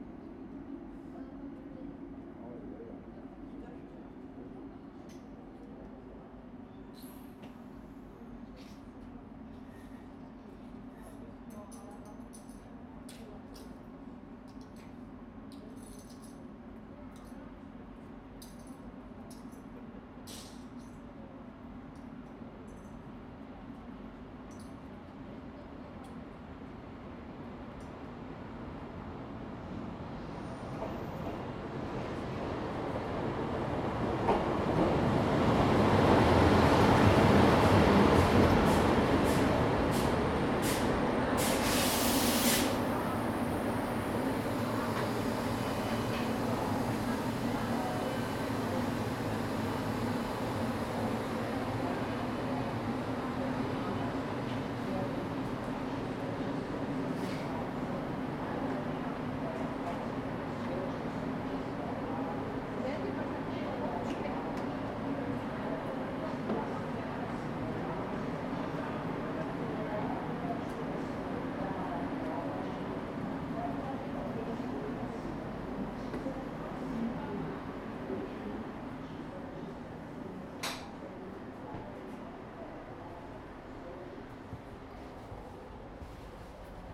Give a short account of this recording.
People waiting, chatting, train arrival, I'm getting on the train. Zoom H2n, 2CH, handheld.